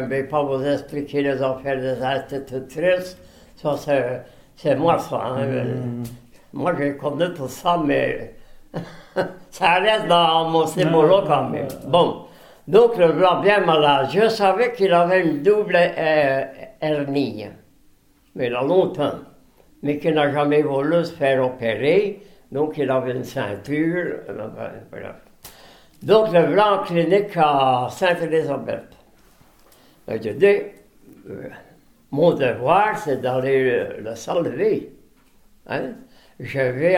An old man memories : Florimond Marchal. He tells a friend, Bernard Sebille, his old remembrances about the local bells. This old kind guy lost his set of false teeth, it was hard for him to talk. He went to paradise on 2011, sebtember 3.